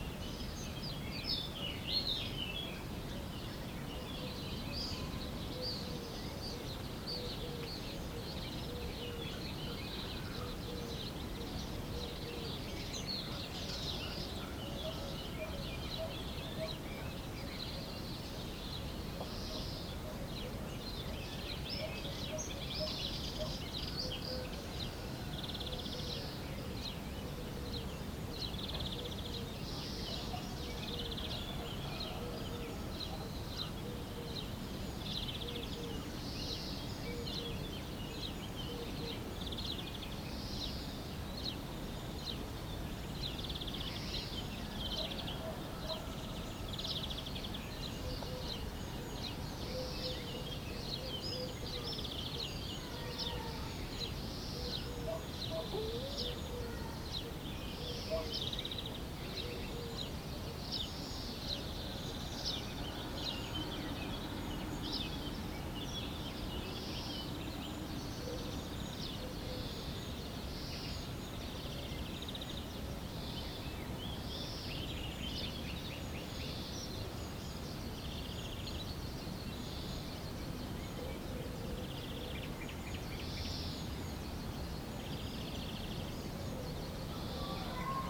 Frigiliana, Málaga, Spanien - Easter Sunday morning in small village in Analucia
TASCAM DR-100mkII with integrated Mics